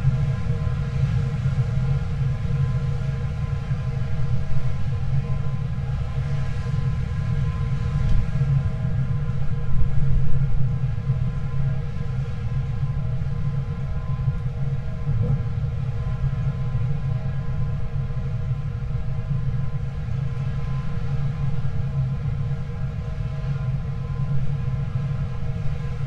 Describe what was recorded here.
가파도 (Gapa-do) is a very small island south of Jeju-do...it is very low lying and exposed to the elements...the clay urns are used for fermenting foods such as kimchi and for making Magkeolli (rice wine) and are to be found at most households throughout Korea...